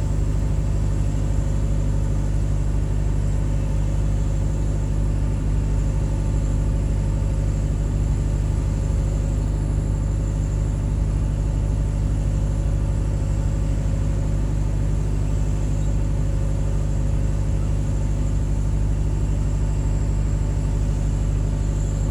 waste disposal site between Berlin Gropiusstadt and airport Schönefeld, near village Großziethen. This site was property of the GDR before 1989, but was used by the city of Berlin (West) based on contract.
Sound of a power generator (a guess).
(Sony PCM D50, DPA4060)
Schönefeld, Germany